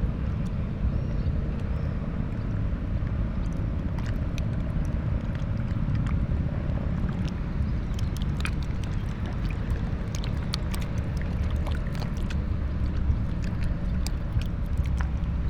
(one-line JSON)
{"title": "river Drava, Ptuj - river flow", "date": "2014-06-28 20:46:00", "description": "saturday evening soundscape close to the river Drava, cumulonimbus cloud reflected with descending sun, works on not so far away bridge, swifts and river gulls ...", "latitude": "46.42", "longitude": "15.87", "altitude": "224", "timezone": "Europe/Ljubljana"}